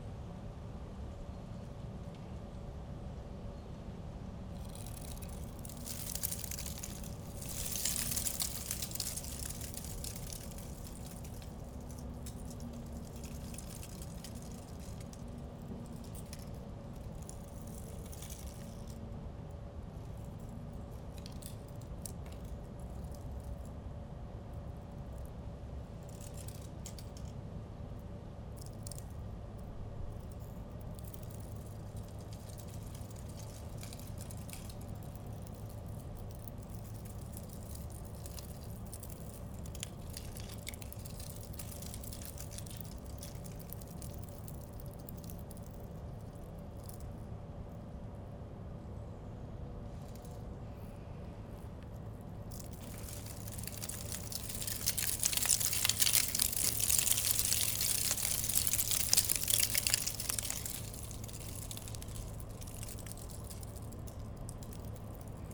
Quartier du Biéreau, Ottignies-Louvain-la-Neuve, Belgique - The sacred stone
In 1968, french speaking students were fired from the Leuven university, a dutch speaking university. Problems were so important, the autorities made a completely new city, called "The New Leuven", which is said in french Louvain-La-Neuve. Today, it's an enormous french speaking university and quite big city ; you can see it with the Google Map, it's huge ! During this difficult perdiod, students stole one cobblestone in Leuven and put it in concrete, here in Louvain-La-Neuve, as we are here in the very first place built in the city. It's a symbol, the old stone from Leuven for a new city in Louvain-La-Neuve. This stone is traditionnaly called the Sacred Stone. As I cover as much as I can the Louvain-La-Neuve city in an aporee sound map, it was important for me to speak about this lonely stone. Today exceptionnaly, wind was blowing in vortex, leading dead leaves. In other times, this place called Sainte-Barbe, is extraordinary quiet. So, here is my sound of the Sacred Stone.
Ottignies-Louvain-la-Neuve, Belgium, 10 September 2016